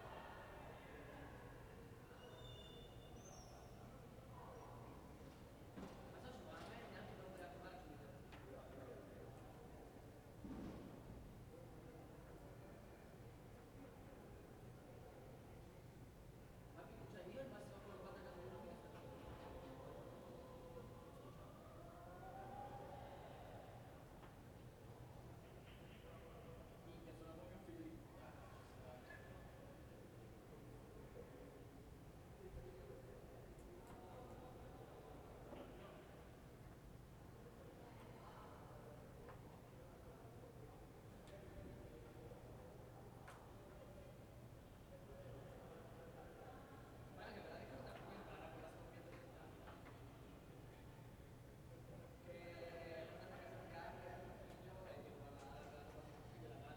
Ascolto il tuo cuore, città. I listen to your heart, city. Several chapters **SCROLL DOWN FOR ALL RECORDINGS** - Evening with voices and radio in background in the time of COVID19 Soundscape
"Evening with voices and radio in background in the time of COVID19" Soundscape
Chapter LXXVI of Ascolto il tuo cuore, città. I listen to your heart, city
Thursday May 14th 2020. Fixed position on an internal terrace at San Salvario district Turin, sixty five days after (but day eleven of Phase II) emergency disposition due to the epidemic of COVID19.
Start at 10:50 p.m. end at 11:40 p.m. duration of recording 50’00”
14 May 2020, ~23:00